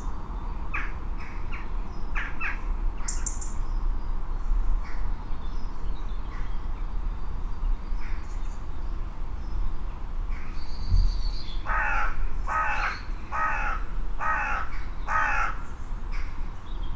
Recordings in the Garage, Malvern, Worcestershire, UK - Jet Crows Birds Feet
Calm after a windy night, a high jet, loud crows, some song birds and my feet as I recover the equipment from the garage.